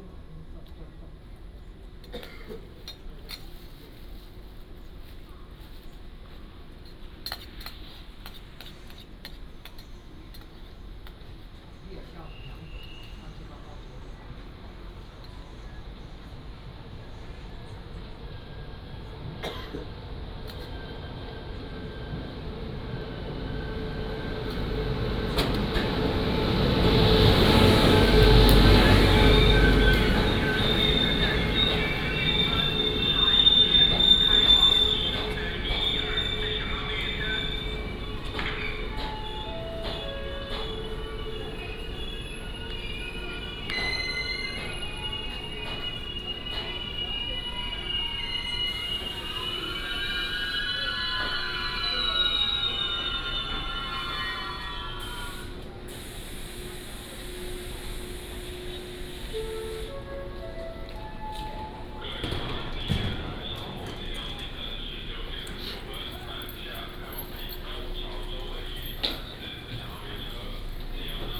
Changhua Station, Changhua City - At the station platform
At the station platform, The train passes by, Station Message Broadcast, Train arrives and leaves